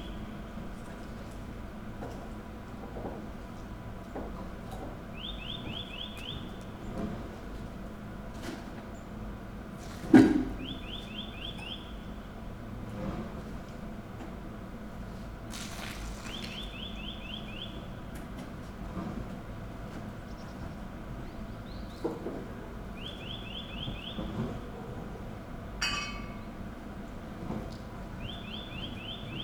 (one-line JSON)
{"title": "from/behind window, Mladinska, Maribor, Slovenia - bird", "date": "2014-12-26 08:23:00", "latitude": "46.56", "longitude": "15.65", "altitude": "285", "timezone": "Europe/Ljubljana"}